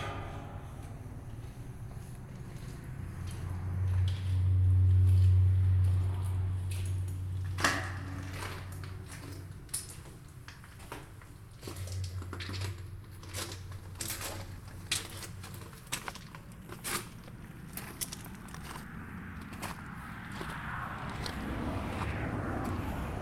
{
  "title": "Shap, UK - Tunnel Resonance",
  "date": "2022-05-07 16:08:00",
  "description": "traffic and voice resonating in a narrow tunnel under the M6 motorway. Recorded with a Zoom H2n",
  "latitude": "54.51",
  "longitude": "-2.65",
  "altitude": "300",
  "timezone": "Europe/London"
}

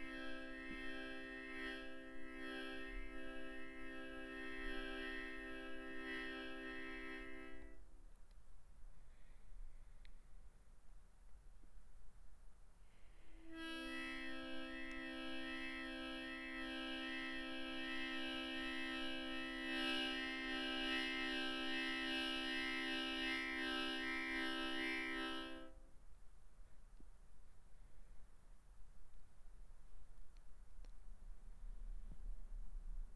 {
  "title": "Vermont St, Oakland, CA, USA - Basement Frog Harmonica",
  "date": "2018-10-10 14:24:00",
  "description": "Used a Tascam DR40 and played a harmonica as I imagine a frog would",
  "latitude": "37.81",
  "longitude": "-122.24",
  "altitude": "22",
  "timezone": "America/Los_Angeles"
}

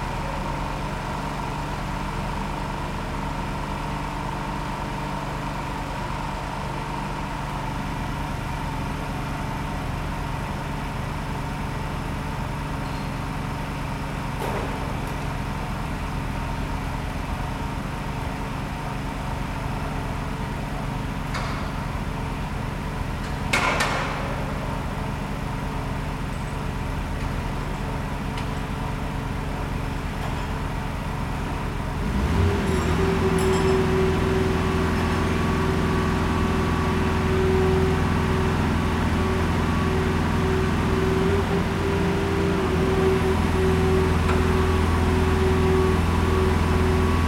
{
  "title": "Rue Irene Joliot Curie, Colombelles, France - Grand Halle Travaux",
  "date": "2018-04-20 14:44:00",
  "description": "Workers in the \"Grande Halle\", Machines noises, Colombelles, France, Zoom H6",
  "latitude": "49.19",
  "longitude": "-0.31",
  "altitude": "33",
  "timezone": "Europe/Paris"
}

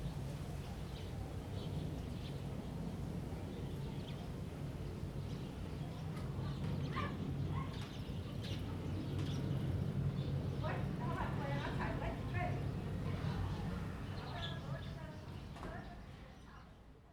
{
  "title": "Fanshucuo, Shuilin Township - Distant fighter sound",
  "date": "2017-01-26 08:51:00",
  "description": "Small village, the sound of birds, Distant fighter sound\nZoom H2n MS +XY",
  "latitude": "23.54",
  "longitude": "120.22",
  "altitude": "6",
  "timezone": "GMT+1"
}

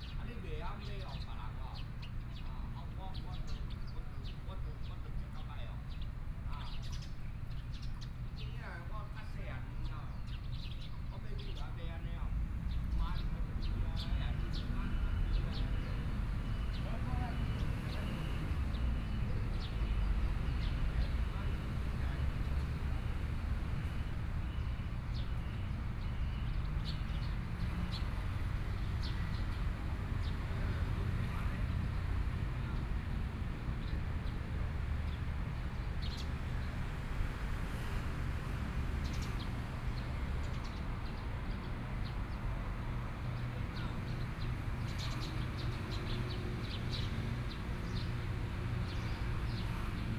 {
  "title": "左營區自助里, Kaohsiung City - in the Park",
  "date": "2014-05-15 12:03:00",
  "description": "Birdsong, Traffic Sound, The weather is very hot",
  "latitude": "22.68",
  "longitude": "120.29",
  "altitude": "16",
  "timezone": "Asia/Taipei"
}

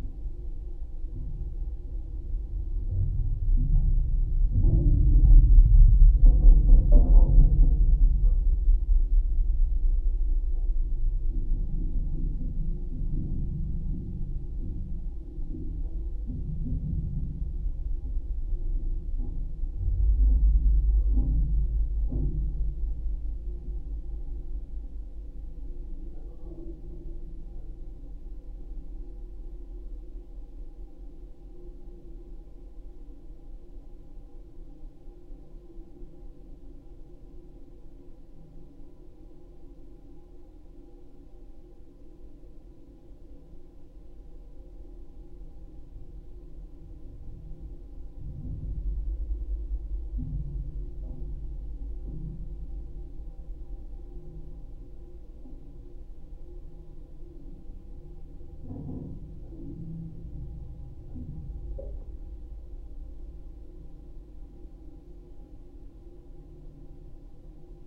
{
  "title": "Dubingiai, Lithuania, temporary passengers bridge",
  "date": "2020-05-23 17:10:00",
  "description": "the main wooden Dubingiai bridge is under reconstruction, so here is built temporary pontoon bridge. geophone on support wire of pontoon, low frequencies",
  "latitude": "55.06",
  "longitude": "25.44",
  "altitude": "142",
  "timezone": "Europe/Vilnius"
}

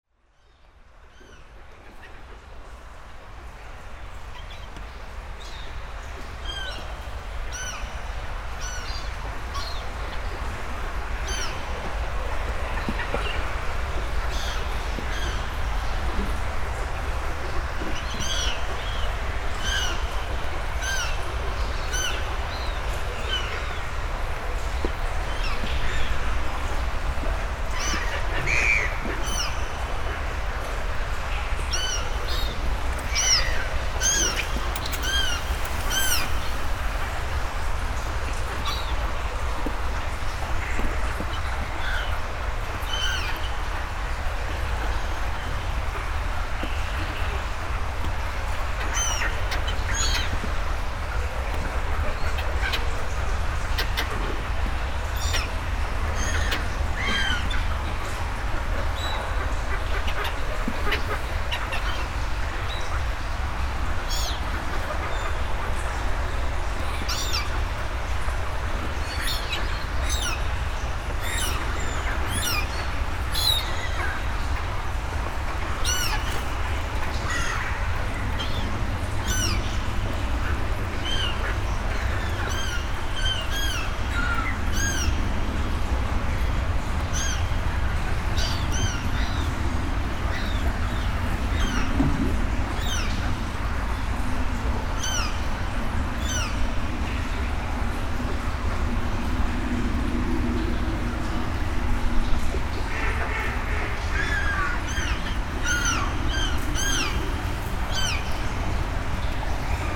{
  "title": "Vilvoorde, Belgium - Seagulls eating shit or something like",
  "date": "2017-12-10 07:55:00",
  "description": "Into the underground Senne river, everything is very-very dirty. Is it a river or is it a sewer ? That's not very clear for me. This river is contaminated, it's smelly. Sludge are grey and sticky. It's disgusting. At the end of the tunnel, seagulls are eating some small things floating on the water (is it still water ?). Sorry for the quite patronizing tittle, but it was unfortunately something like that.",
  "latitude": "50.93",
  "longitude": "4.41",
  "altitude": "12",
  "timezone": "Europe/Brussels"
}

